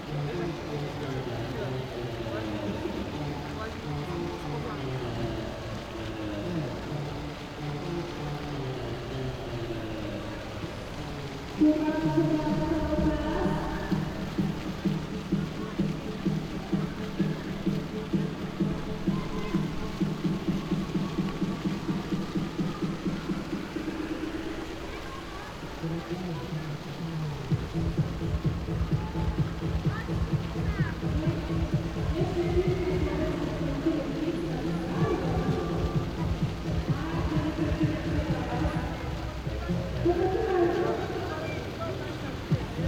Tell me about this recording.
kids party with amplifier and bouncy castle at the main square, echoes, (Sony PCM D50)